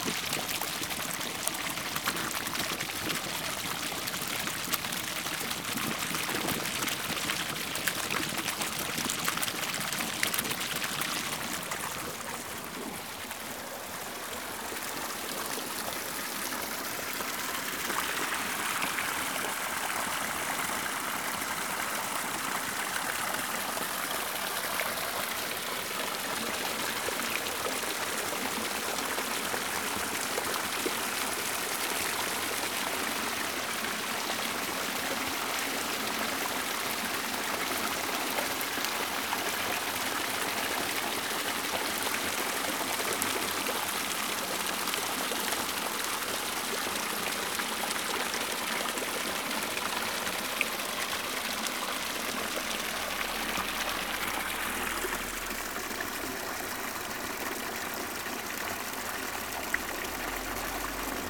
Rians, France - fontaine François
Cette fontaine servait aussi à abreuver les moutons. L'eau y coule avec force
This fountain was also used to water the sheep. Water flows with force